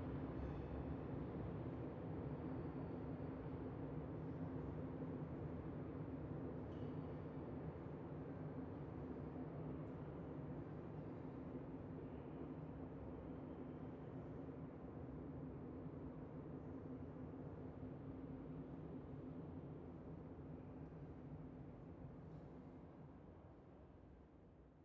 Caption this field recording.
Warning: start with a low volume. The trains passing through this station seem like they become noisier every day. Recorded with a Zoom H6 Handy Recorder, XY 90° capsule.